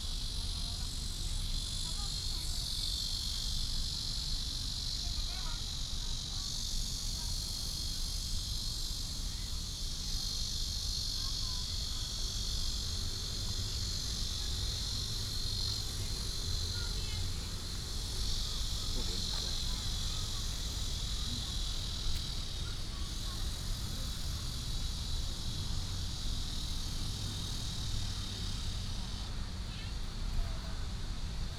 陽明運動公園, Taoyuan Dist. - Walking in the park
Walking in the park, Cicadas, sound of birds, Footsteps, Traffic sound
Taoyuan City, Taiwan, 15 July, 18:50